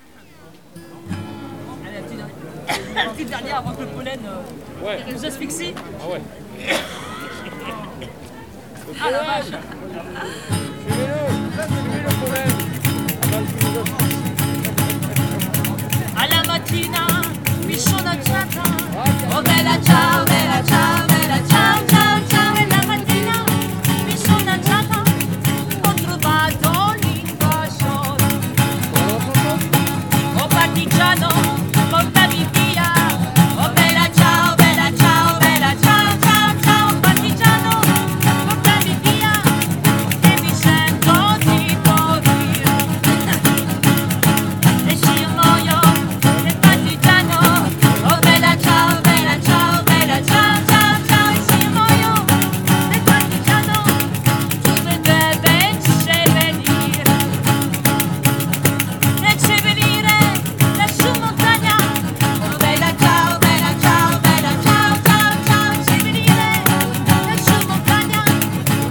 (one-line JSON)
{"title": "Boulevard Jules Michelet, Toulouse, France - Antoinette Cremona play live Bella Ciao", "date": "2021-04-24 10:14:00", "description": "Bella Ciao\nAntoinette Cremona live at ST Aubin Market", "latitude": "43.60", "longitude": "1.46", "altitude": "154", "timezone": "Europe/Paris"}